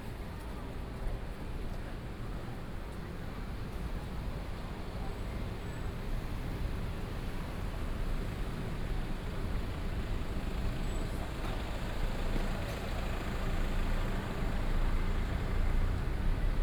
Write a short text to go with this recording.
walking in the Street, Traffic noise, A variety of shops and restaurants